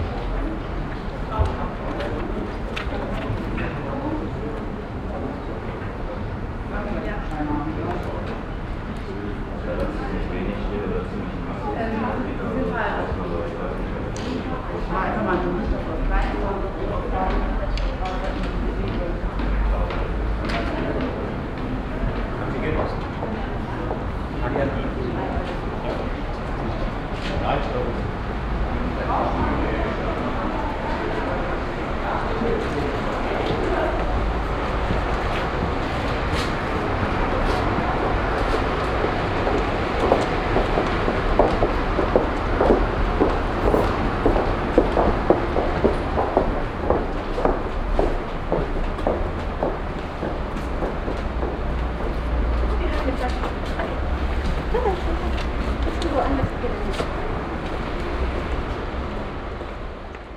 heiligenhaus, hauptstrasse, sparkasse
betrieb in der sparkassenhauptfiliale, morgens - kontoauszugdrucker, bankautomaten, papiere, schritte, stimmen
soundmap nrw: social ambiences/ listen to the people - in & outdoor nearfield recordings, listen to the people